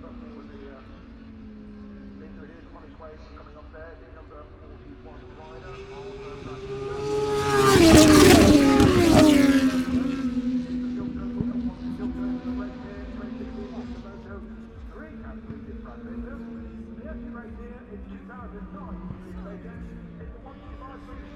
Silverstone Circuit, Towcester, UK - british motorcycle grand prix 2019 ... moto two ... fp3 ...
britsish motorcycle grand prix 2019 ... moto two ... free practice three ... maggotts ... lavalier mics clipped to bag ...